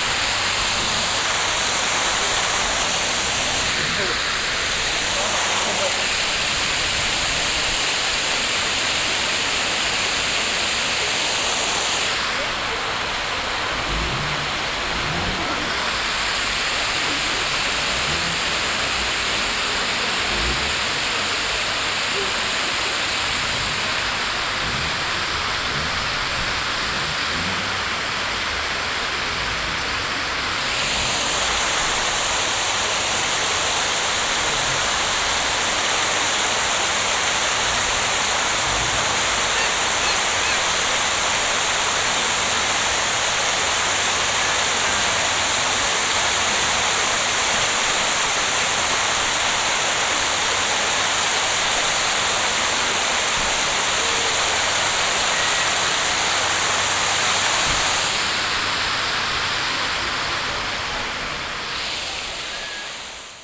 a modern fountain in the shopping zone - spraying water rings
soundmap d - social ambiences and topographic field recordings
Stuttgart, Germany, 19 June, 18:21